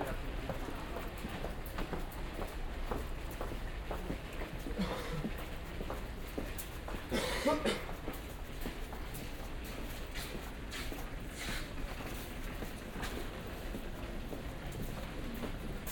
Railway station hallways, Warszawa, Poland - (98 BI) Railway station hallways
Binaural recording of Central Warsaw railway station hallways with announcements at the end.
Recorded with Soundman OKM + Zoom H2n